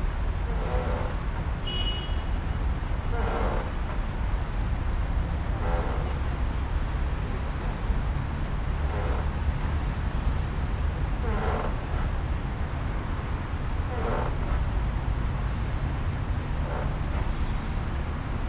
Quai de Saône à Lyon 4e, près du Pont Schuman, des amarres dune péniche à quoi gémissent.
Lyon, France, 16 November, 18:35